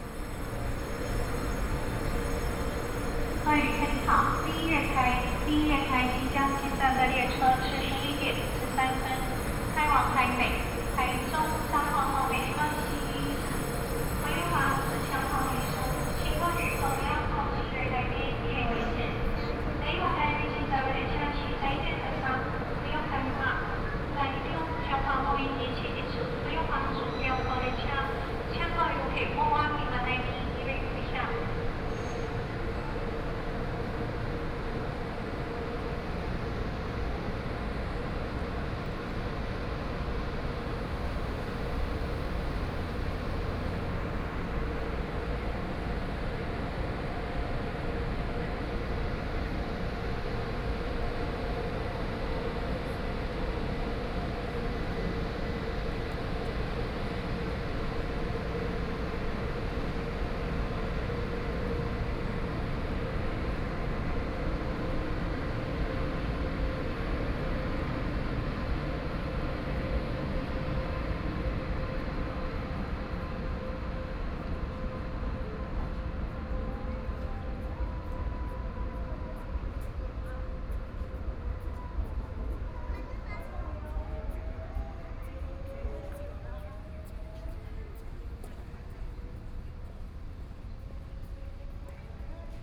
Walking in the station platform
Sony PCM D50+ Soundman OKM II